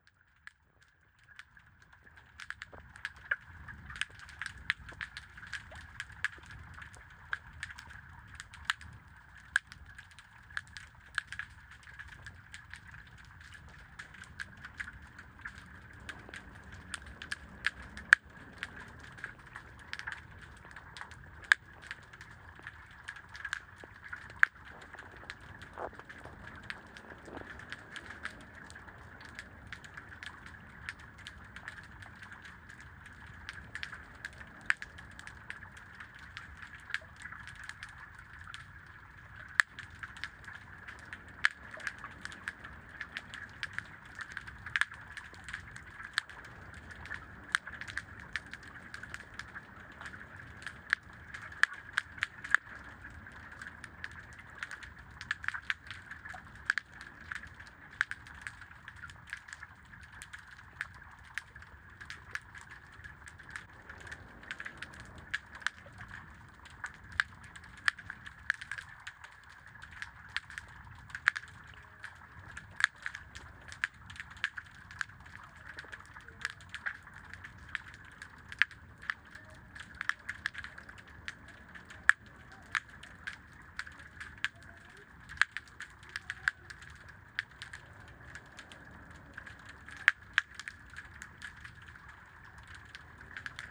Hydrophones in the Senegal River recording the sounds of sardines feeding from the Faidherbe Bridge. Hydrophones by Jez Riley French, recorded on Zoom H4 recorder.